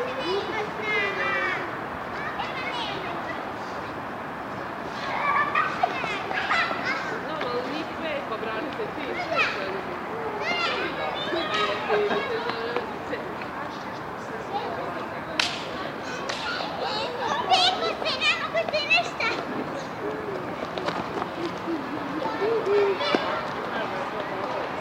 Bihać, Bosnia and Herzegovina - Bihać streets
September 1996 - Bosnia after war. Recorded on a compact cassette and a big tape recorder !
Bihać was hardly destroyed by war because of conflict (1995, july 23). Now every children play loudly in streets.
17 September 1996